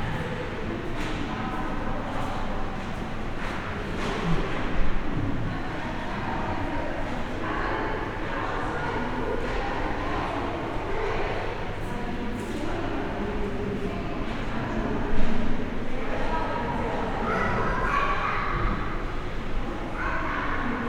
frankfurt, entrance to kunsthalle - the city, the country & me: schirn art gallery, entrance hall
entrance hall, voices and steps
the city, the country & me: september 27, 2013
Frankfurt, Germany, 27 September 2013